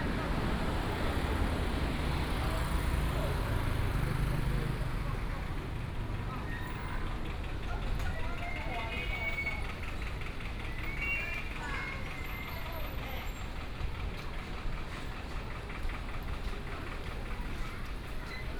Tonghua St., Da’an Dist., Taipei City - walking in the Street
walking in the Street
Da’an District, Taipei City, Taiwan, 30 July 2015, 17:46